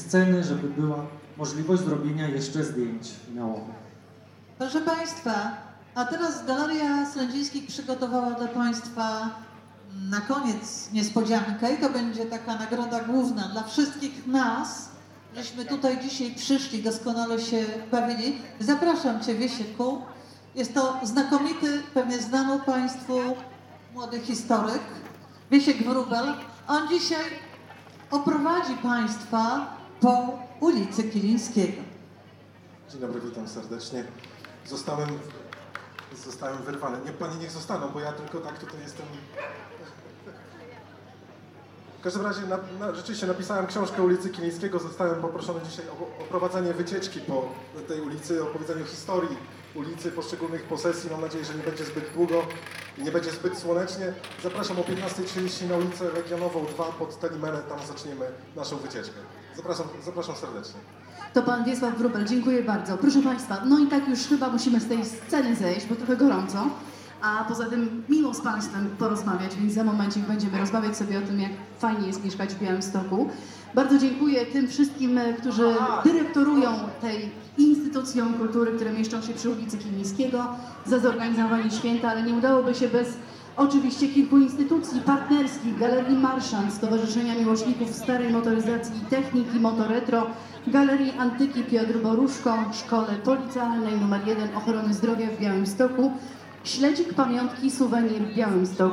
Binaural recording of an unknown fair or festival.
Recorded with Soundman OKM on Sony PCM D100
28 May 2017, ~16:00